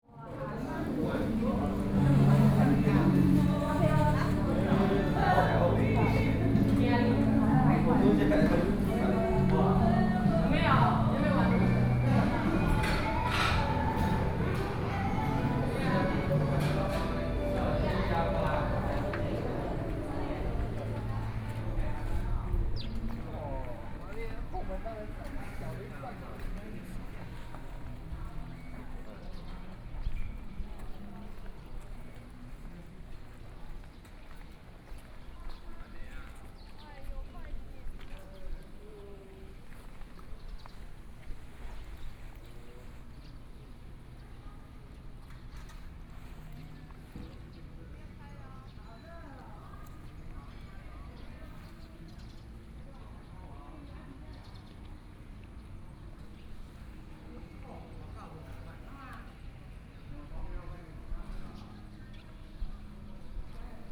Come out from the restaurant, Tourists, In the Square, Sightseeing area of agricultural products, The weather is very hot
Zoom H2n MS +XY
Taitung County, Chihshang Township